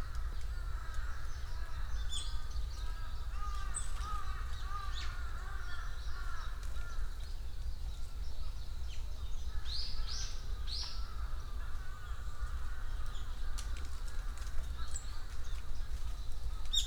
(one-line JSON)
{"title": "사려니숲 Saryeoni Forest (#2)", "date": "2018-10-14 14:30:00", "description": "사려니숲 Saryeoni Forest is located on the middle slopes of Halla Mountain. Jeju Island is a volcanic artifact, and lava fields are to be easily found. This coverage of special lava geology, as well as the fact that it is an island, gives Jeju a special ecological character. In the mid-ground of this recording are heard the mountain crows...their caws echo among the forest (...there were many trees of a good age and size here as is hard to find in other parts of Korea)...in the foreground the activity of many smaller forest birds...wingbeats...background; the curse of Jeju Island is the inescapable noise of the tourism industry...aircraft, tour buses, etc...", "latitude": "33.42", "longitude": "126.63", "altitude": "596", "timezone": "GMT+1"}